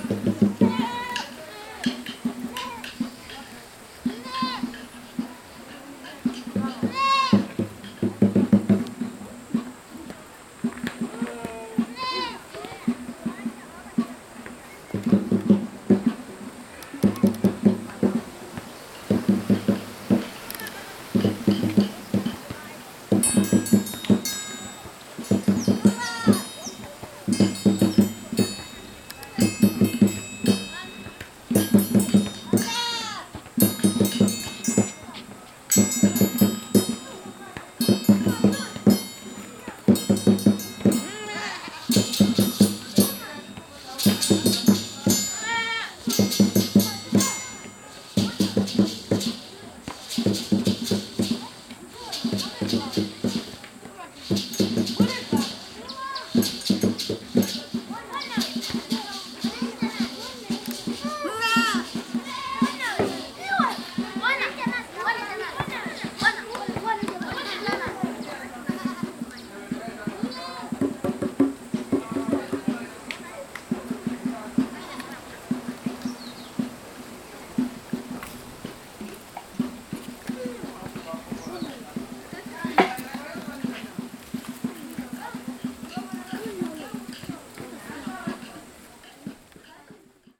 Siachilaba Primary School, Binga, Zimbabwe - Under the Siachilaba Baobab Tree...
…under the huge ancient Baobab Tree on the grounds of Siachilaba Primary School… “twenty men cannot span that tree…”… the wind in the branches… and a music class in process…